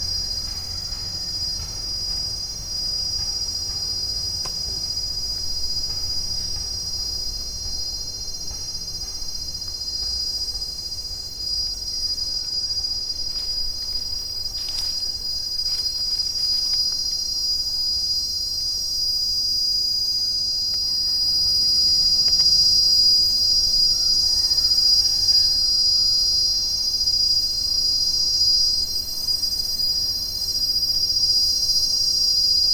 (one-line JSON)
{"title": "Entoto, Addis-Abeba, Oromia, Éthiopie - forestfish", "date": "2011-11-13 15:43:00", "description": "Eucalyptus trees on the hills of Entoto(ge'ez : እንጦጦ)\nreaching for the forestfish", "latitude": "9.09", "longitude": "38.76", "altitude": "2883", "timezone": "Africa/Addis_Ababa"}